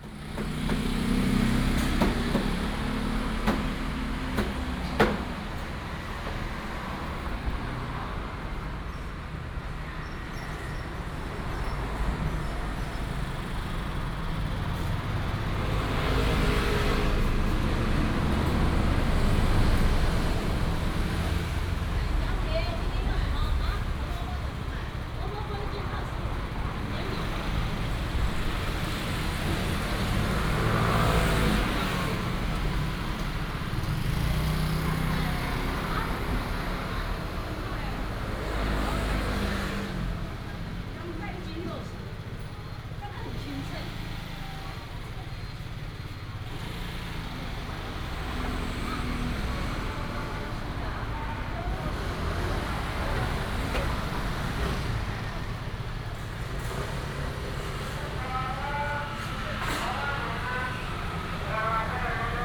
Sec., Longhua St., Zhongli Dist., Taoyuan City - At the traditional market entrance
At the traditional market entrance, Traffic sound, Binaural recordings, Sony PCM D100+ Soundman OKM II
Zhongli District, Taoyuan City, Taiwan